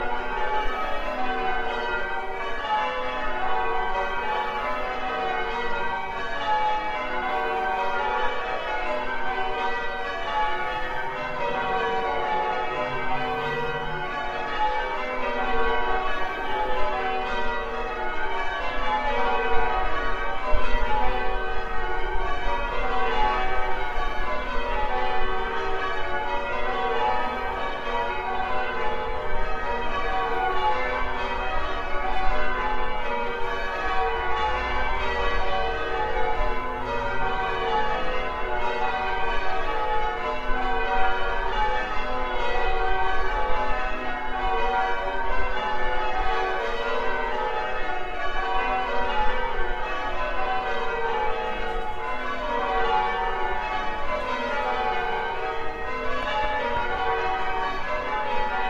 Bell ringing practice at St Mary & St Laurence Church in Bolsover